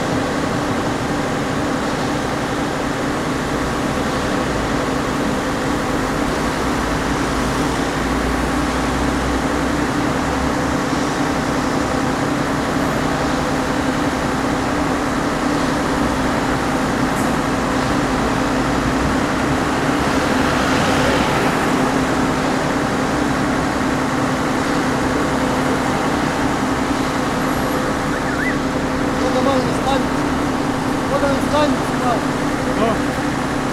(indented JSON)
{
  "title": "wien iii - u-bahnhof erdberg",
  "date": "2009-10-02 16:45:00",
  "description": "u-bahnhof erdberg",
  "latitude": "48.19",
  "longitude": "16.41",
  "altitude": "160",
  "timezone": "Europe/Vienna"
}